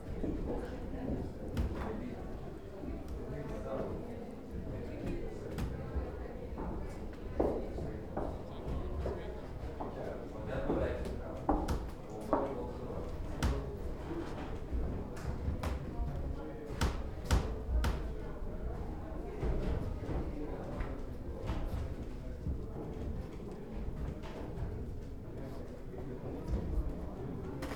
Vyzuoneles, Lithuania, painters' plein air
an opening of art plein air exhibition in the abandoned building of Vyzuoneles manor
Atkočiškės, Lithuania, 26 July 2015